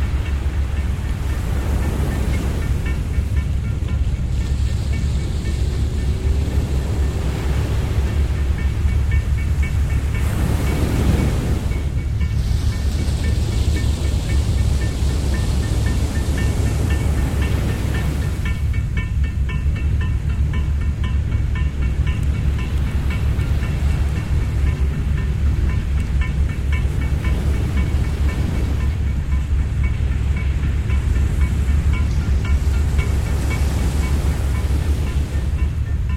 Fishing Boat with surfing boy and mum, Lamma Island